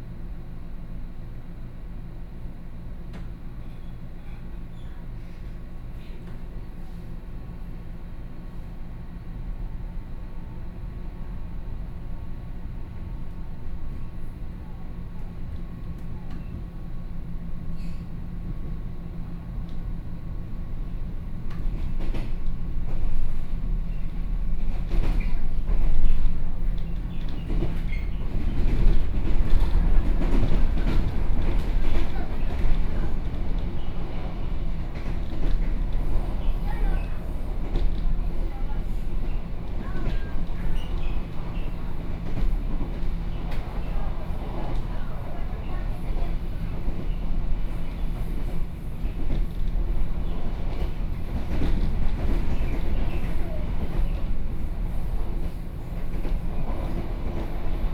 Yangmei, Taoyuan County - Local Express
from Fugang Station to Yangmei Station, Sony PCM D50+ Soundman OKM II